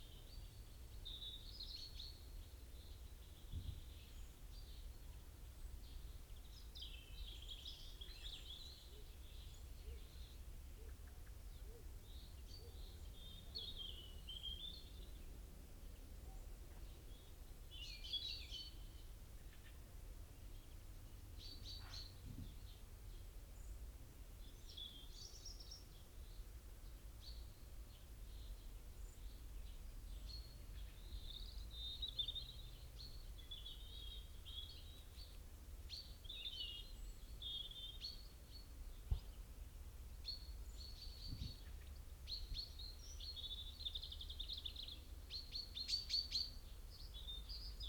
Luttons, UK - Chaffinch song soundscape ...
Chaffinch song and call soundscape ... recorded with binaural dummy head to Sony Minidisk ... bird songs ... calls from ... tree sparrow ... robin ... dunnock ... blackbird ... crow ... wood pigeon ... great spotted woodpecker ... wood pigeon ... stove dove .. blue tit ... great tit ... mute swan wing beats ... coal tit ... plus background noise ... traffic ...
Malton, UK